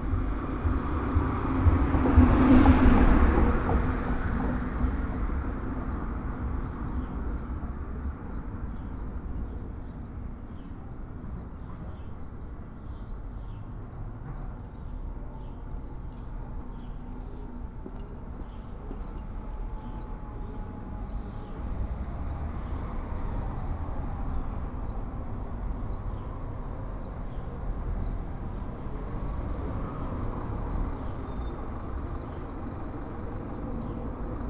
Pedro Moreno, Centro, León, Gto., Mexico - Calle Pedro Moreno, frente al Templo El Mezquitito durante el primer día de la fase 3 de COVID-19.
Pedro Moreno Street, in front of the El Mezquitito Temple during the first day of phase 3 of COVID-19.
(I stopped to record while going for some medicine.)
I made this recording on April 21st, 2020, at 2:36 p.m.
I used a Tascam DR-05X with its built-in microphones and a Tascam WS-11 windshield.
Original Recording:
Type: Stereo
Esta grabación la hice el 21 de abril 2020 a las 14:36 horas.
Guanajuato, México